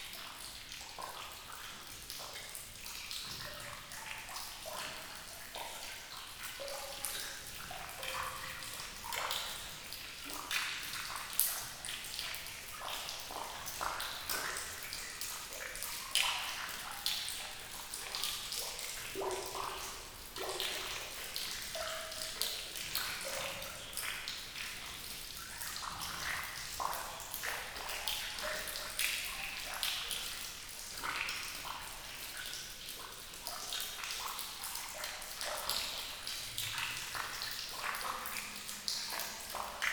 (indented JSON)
{
  "title": "Crugey, France - Drops into the cement mine",
  "date": "2017-06-15 17:35:00",
  "description": "Into the wide underground cement mine, drops are falling onto the ground, with a few particular reverb you can hear in near every big mine.",
  "latitude": "47.19",
  "longitude": "4.70",
  "altitude": "464",
  "timezone": "Europe/Paris"
}